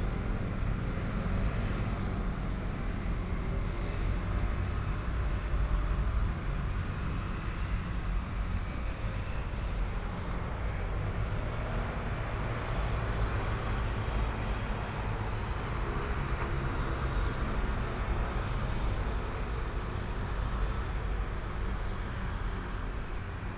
Sec., Xinglong Rd., Zhubei City - traffic sound
traffic sound, the train runs through